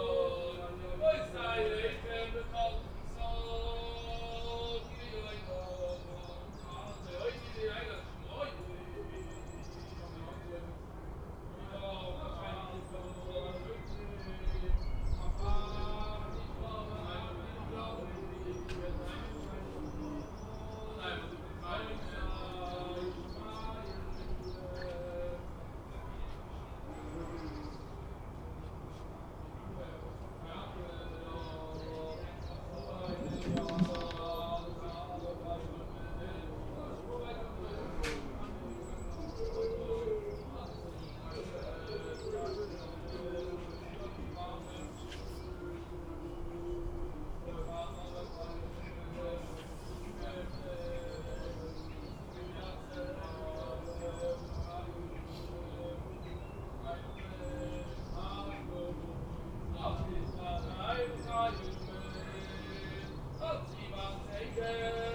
{"title": "Lamorinierestraat, Antwerp, Belgium - Pesach liturgy over Corona-crisis", "date": "2020-04-11 10:51:00", "description": "Orthodox Jews singing the liturgy during the Corona-crisis, with 10 men spread over gardens and balconies.", "latitude": "51.20", "longitude": "4.42", "altitude": "11", "timezone": "Europe/Brussels"}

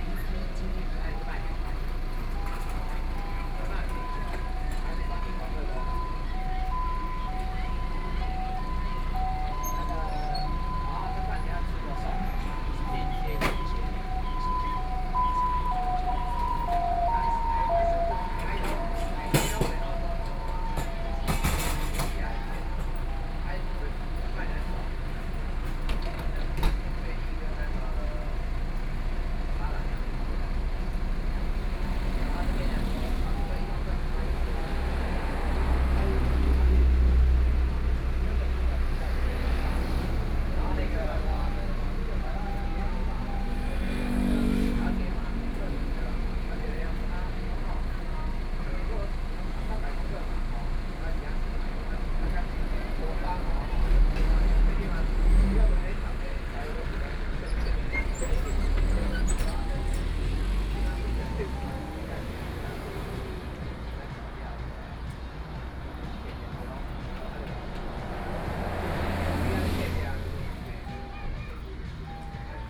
{"title": "Su'ao Township, Yilan County - In front of the convenience store", "date": "2014-07-28 13:34:00", "description": "In front of the convenience store, At the roadside, Traffic Sound, Hot weather", "latitude": "24.60", "longitude": "121.83", "altitude": "16", "timezone": "Asia/Taipei"}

